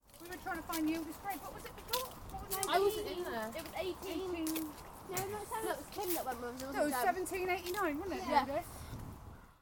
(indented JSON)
{
  "title": "Efford Walk Two: More on Elephants graveyard - More on Elephants graveyard",
  "date": "2010-10-04 10:35:00",
  "latitude": "50.39",
  "longitude": "-4.10",
  "altitude": "85",
  "timezone": "Europe/London"
}